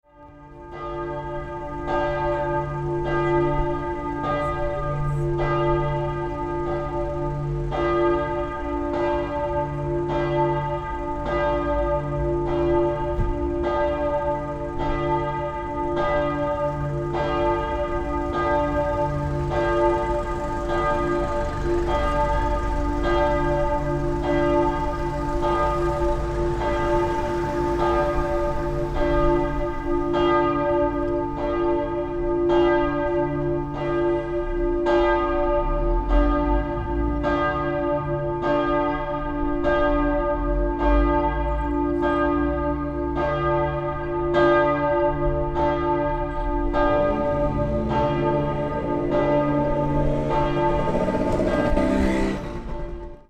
Dehrn, funeral, church bells
wed 06.08.2008, 14:45
funeral church bells in little village Dehrn